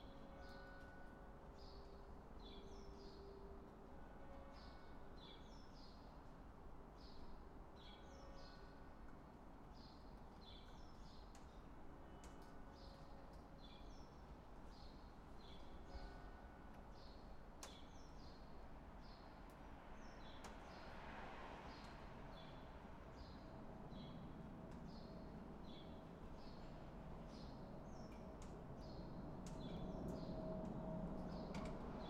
Offenbach am Main, Germany - Busy morning
Hessen, Deutschland, April 2013